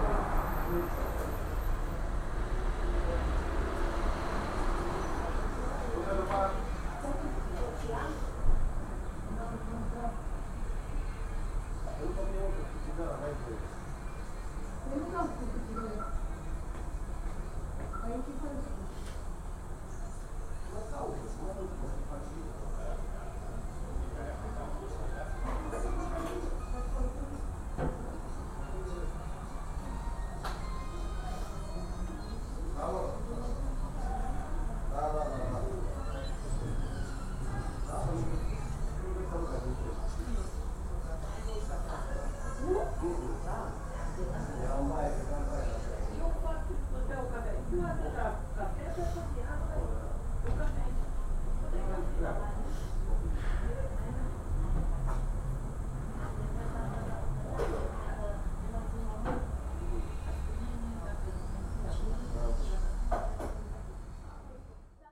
Fundatia Parada, Strada Bucur, Sector, Bucharest, Romania - Parada Foundation Courtyard, Bucharest
Parada Foundation is a Romanian NGO created in Bucharest in 1996 by a French clown, Miloud Oukili. It is part of the Federation of NGOs for children’s protection (FONPC) since 2002.
The aim of the organisation is to help street children and young people as well as homeless families thanks to various services like integration, social assistance, education and socio-professional integration.
This recording was made from the kitchen window of the courtyard at Parada